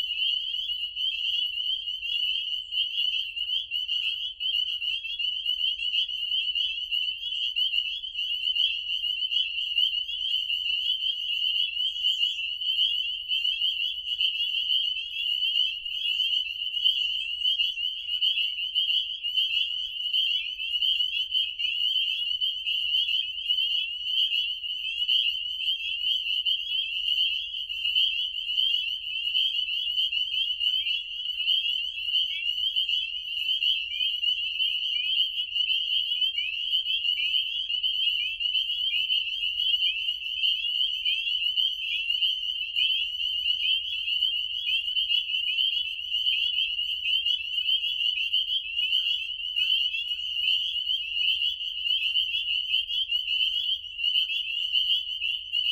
Tumbling Cir, Oakwood, GA, USA - Frogs on a spring evening at Tumbling Creek Woods
Behind the University of North Georgia, a small wetland becomes the venue for a chorus of frogs. I went out there hoping to photograph a heron feeding in a pool, but I came back with this number instead. Recored with Zoom H6 and shotgun mic.